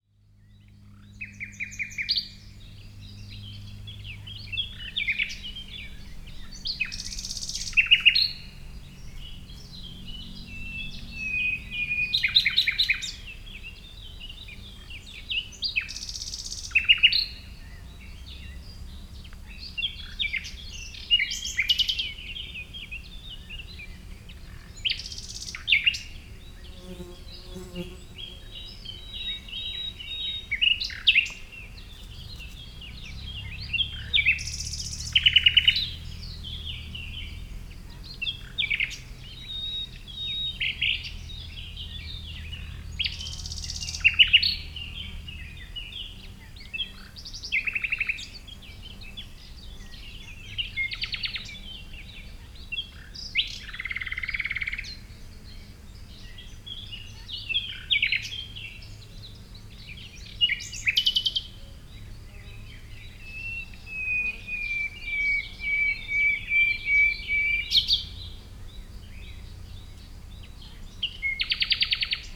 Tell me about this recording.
Recorded in a forest near small valley. Recorded with ZOOM H5 and LOM Uši Pro, Olson Wing array. Best with headphones.